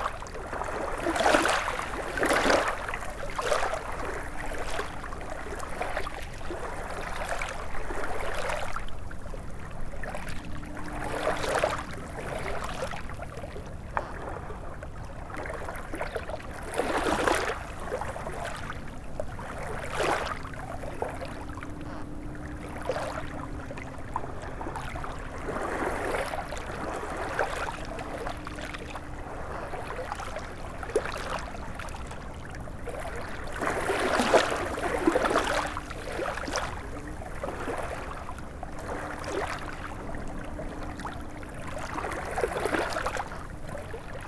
Berville-sur-Mer, France - Risle river
Sound of the Risle river, on a beach just near the Seine river. We could believe we are at the sea.